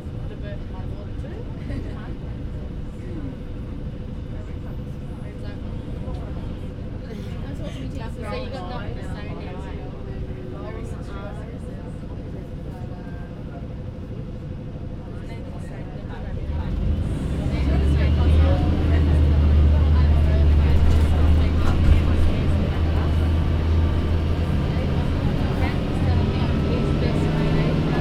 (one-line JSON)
{"title": "neoscenes: 431 bus to Millers Point", "date": "2010-09-11 22:26:00", "latitude": "-33.87", "longitude": "151.21", "altitude": "56", "timezone": "Australia/Sydney"}